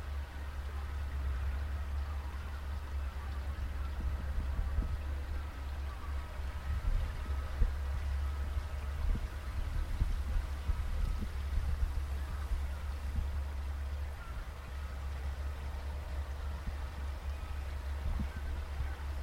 Veerweg, Bronkhorst, Netherlands - kunstgemaal observatiepost ganzenveld
Ganzenveld, aeroplane, boat, resonances inside observation post.
Zoom H1.
6 January 2021, 15:52